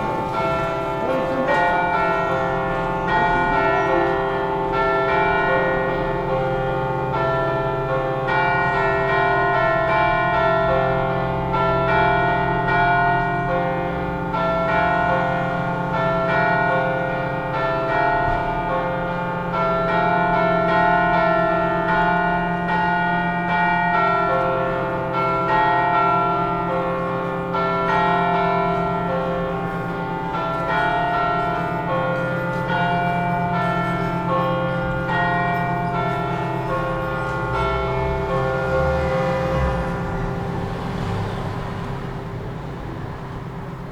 Vaise, Lyon, France - Cloches de l'église de l'Annonciation
Volées de cloches, place de Paris, dimanche à 11 heures, enregistrées de ma fenêtre